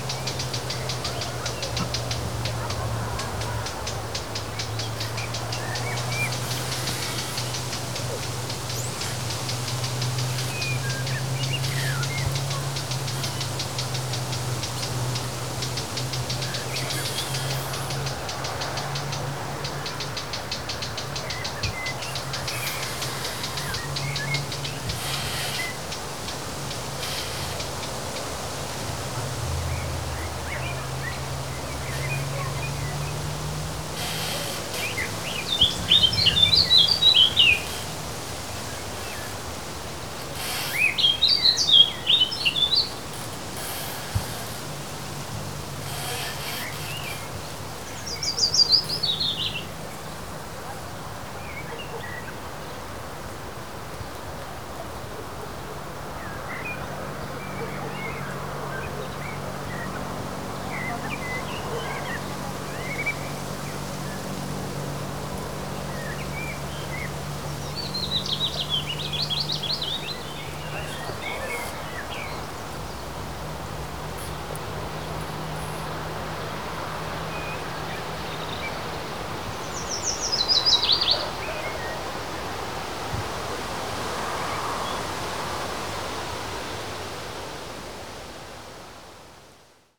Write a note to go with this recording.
microphones pointed into a small groove. bird cutting air with its sharp chirp. swoosh of bushes. creaking branches. cars and dogs in activity in the distance.